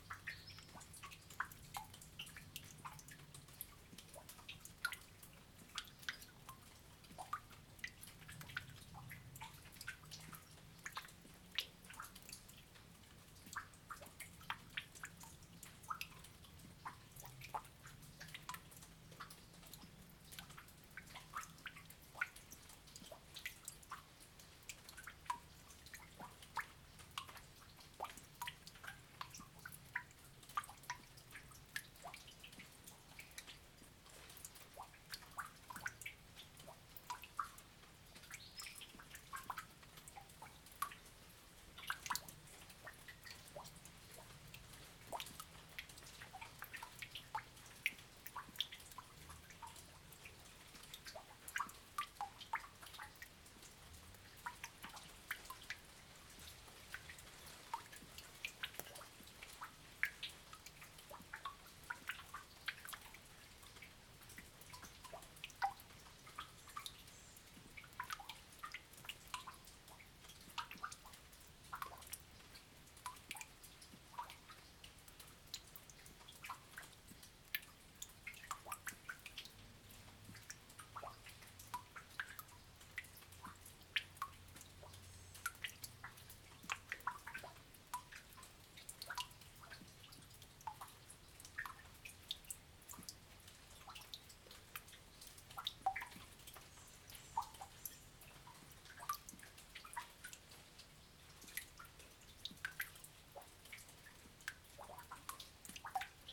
Recording from deep within a large shelter cave in Don Robinson State Park. St. Louis entrepreneur Don Robinson, creator of Jyro Cola and Off stain remover, bequeathed his land in what was once remote Jeffco to the Missouri state park system. His bachelor hillbilly hideaway contained a cement pond where he would entertain weekend guests surrounded by stone arches rescued from the former Gaslight Square entertainment district of St. Louis. In an underground bunker with views into the swimming pool, he produced his soft drink and stain remover. The bunker, pool and arches have been replaced by a scenic overlook. His 100 year old stone house still stands, though I suspect it will likely succumb to becoming a rental facility, hosting weddings, with the beautiful LaBarque Hills serving as the photogenic background to the festivities. A nausea-inducing roller coaster of a ride will get you and your passengers to the park in Byrnesville.
Missouri, United States, 15 May, ~15:00